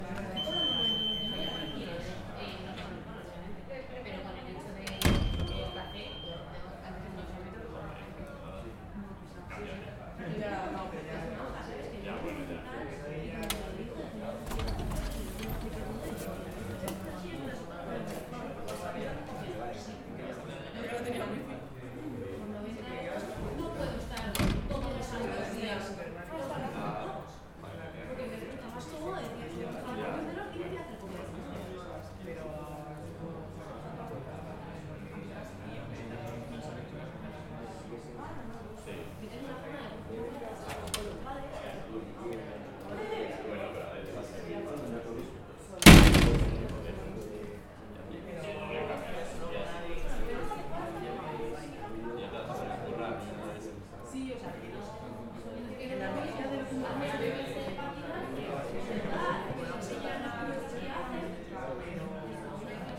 This audio shows the different sounds that we can hear outside the library of the the library of the Faculty of Philosophy of the University.
You can hear:
- Sound of the door opening
- Door slaming shut
- The sound of the anti-theft system with the door open
- The sound of the anti-theft system with the door closed
- Background voices
Gear:
- Zoom h4n
- Cristina Ortiz Casillas
- Erica Arredondo Arosa
- Carlos Segura García
Madrid, Spain, 27 November 2018